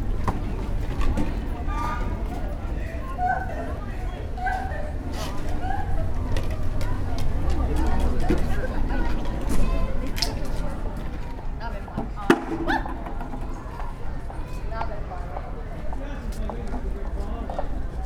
The Old Market, Hereford, UK - sequence
A sequence of sounds captured during a walk in the city centre of Hereford. I start in the Old Market, then to the High Road shopping area, through the Cathedral and finally Bishop's Mradow and King George V Playing fields.
MixPre 3 with 2 x Rode NT5s
England, United Kingdom